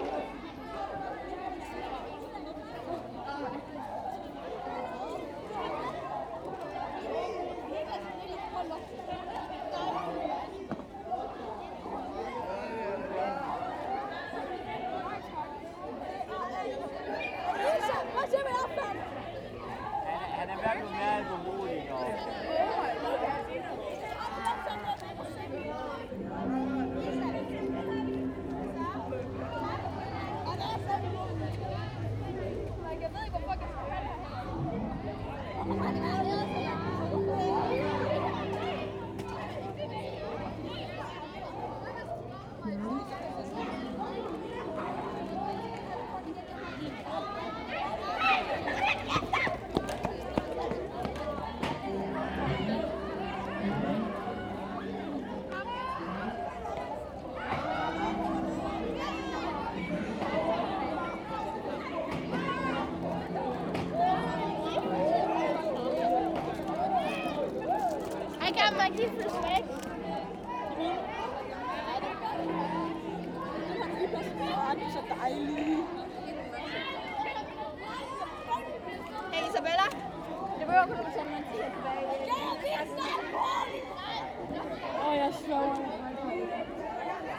Kirkegade, Struer, Denmark - Excited teenagers and music sculptures in the main square
Teenagers being teenagers. Sometimes playing the tuning fork sculptures, which are a sound installation in the big square.
Region Midtjylland, Danmark, September 2022